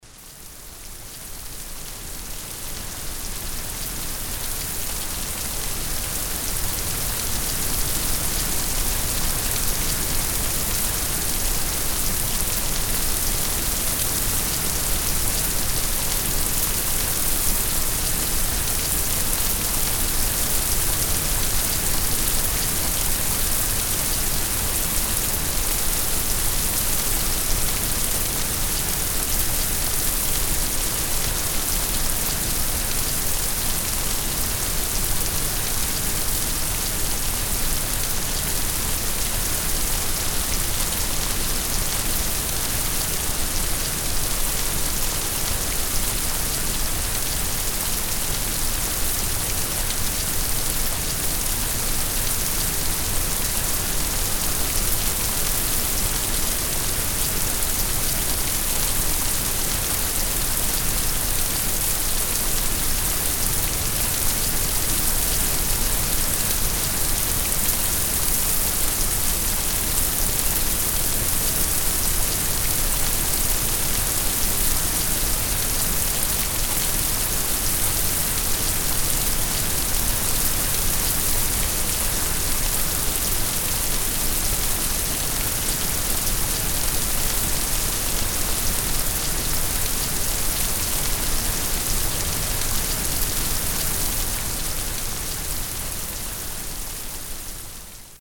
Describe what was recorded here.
Inside the forest as it starts to rain. The sound of the water drops on the tree leaves and pouring down the trunks and path. Wahlhausen, Wald, Regen, Innerhalb des Waldes als es zu regnen beginnt. Die Klänge der Wassertropfen auf den Blättern und wie sie die Baumstämme herunterlaufen. Wahlhausen, forêt, pluie, En forêt, alors qu’il commence à pleuvoir. Le son de l’eau qui goutte sur les feuilles des arbres et coule le long des troncs sur le chemin.